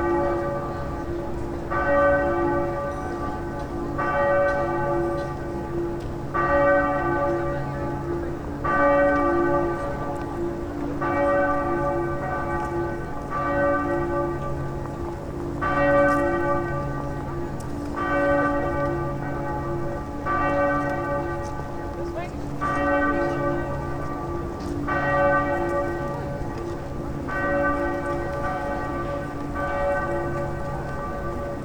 It is a recording from the six o'clock ringing of the bells in Bonn Cathedral. You can hear how the whole place vibrates and resonates.
Nordrhein-Westfalen, Deutschland, 23 August 2010